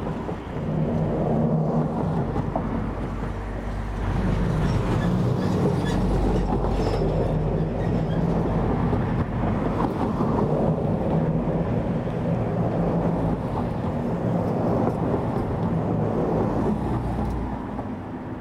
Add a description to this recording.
Recording from Longboat Pass Bridge near the moveable span.